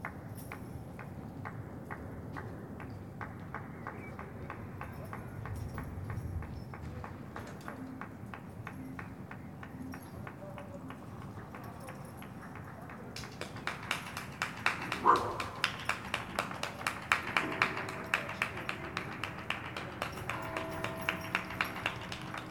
Reuterstrasse: Balcony Recordings of Public Actions - Public Clapping Day 02
Recorded from my balcony on a Sony PCM D100
Deutschland, 22 March 2020